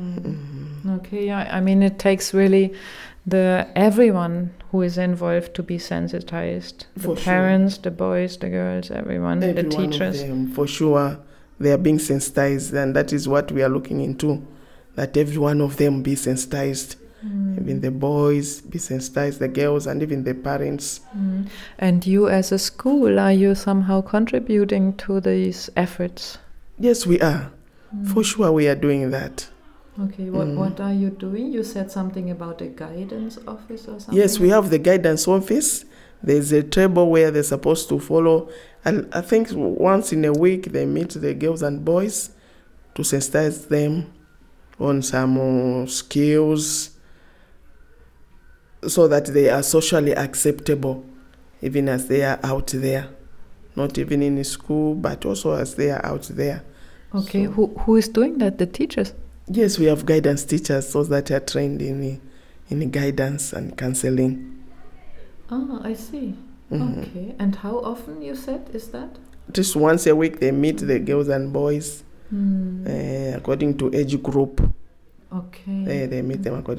Sinazongwe Primary, Senior Teachers Office, Sinazongwe, Zambia - Expectations on girls...
Over the 50 years since it was established, the school didn’t have one female head teacher… Mrs Chilowana Senior Teacher tells us. In the main part of the interview, we ask Mrs Chilowana to describe for us the social expectations on girl and boy children in the rural community... Mrs Chilowana has been in the teaching services for 25 year; the past 10 years as a Senior Teacher at Sinazongwe Primary/ Secondary School.
the entire interview can be found here: